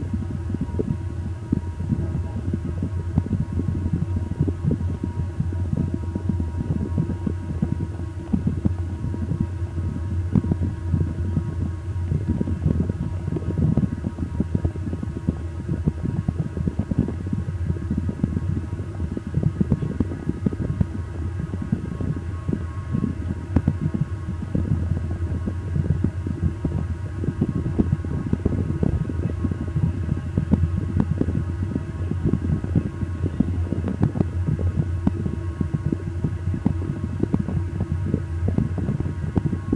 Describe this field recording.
I really don't know for sure what was going on down in this manhole shaft while I was recording, but I like it. Did I lower the mics into a web of bubbles? A cluster of alien egg-sacks? Roaches? Distant voices, music, etc. CA14 cardioid pair > DR100 MK2